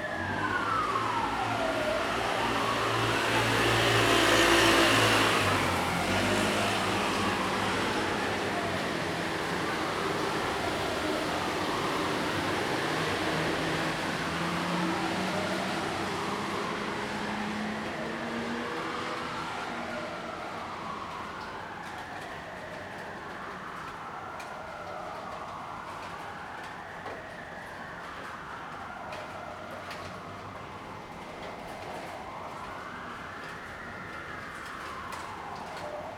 Daren St., 淡水區, New Taipei City - Fire engines
Traffic sound, Fire engines, Wet and cold weather
Zoom H2n MS+XY